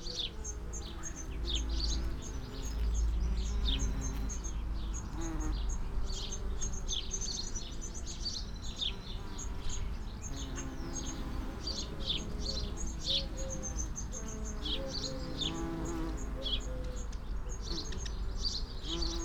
Chapel Fields, Helperthorpe, Malton, UK - bees on lavender ...
bees on lavender ... SASS placed between two lavender bushes ... bird calls ... song ... calls ... starling ... house sparrow ... dunnock ... chaffinch ... house martin ... collared dove ... blackbird ... background noise ... traffic ...
12 July 2019